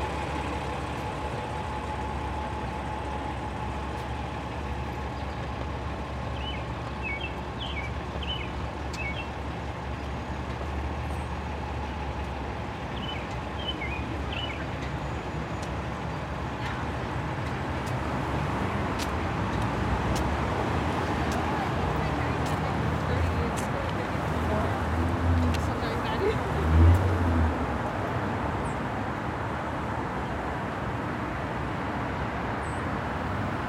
Ave Tunnel, New York, NY, USA - A bird singing in the United Nations front garden.
A bird is singing on top of a tree in the United Nations Headquarters front garden amidst the sound of traffic.
United States